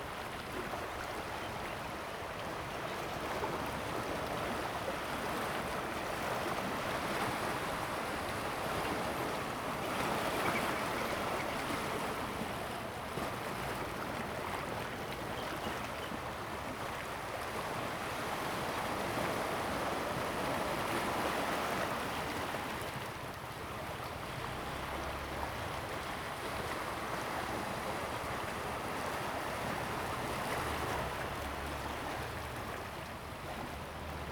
恆春鎮砂島, Pingtung County - Tide
On the coast, Sound of the waves, Birds sound, traffic sound
Zoom H2n MS+XY